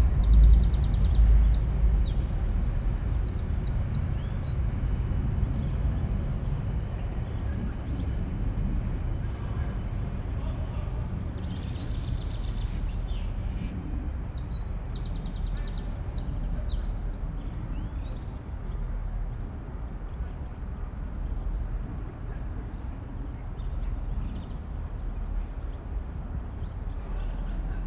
small pier, Castle Mill Stream, Oxford, UK - morning ambience, train
on a small pier at Castle Mill Stream, listening to passing-by trains and the ambience of that sunny morning in early spring.
(Sony PCM D50, Primo EM172)